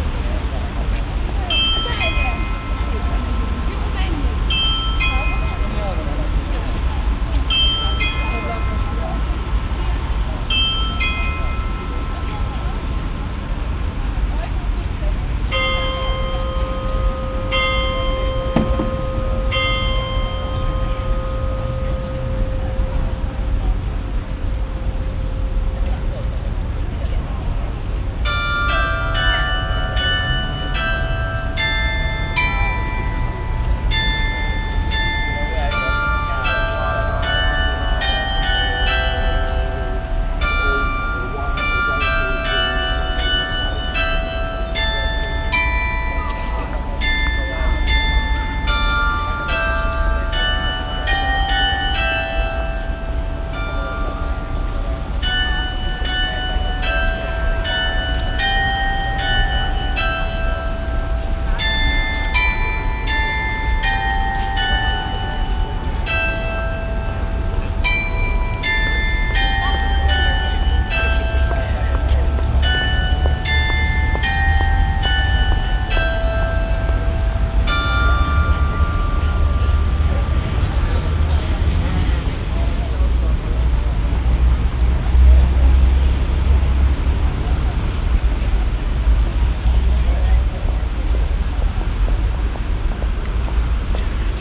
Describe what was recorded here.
Centre of the city, usually crowded. The recording done on a rainy day so the city life (noise) is less vivid than usual. Recorded on a Tascam DR-05 with Roland CS-10EM in-ear binaural microphones.